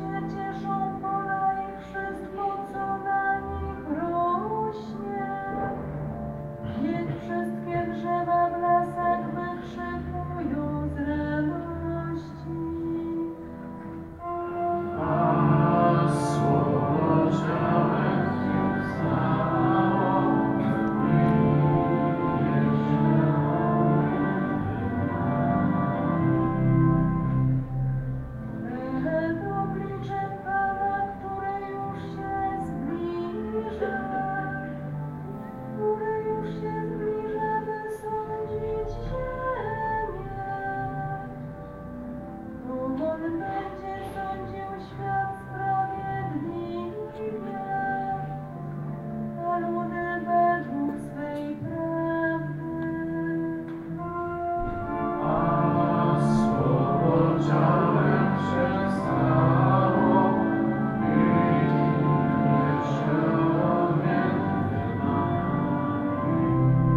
Franciscans chruch, Przemyśl, Poland - (75 BI) Prayers
Binaural recording of prayers at the door of Franciscan's Church entrance during a sermon on the first of Catholic Christmas.
Recorded with Soundman OKM on Sony PCM D-100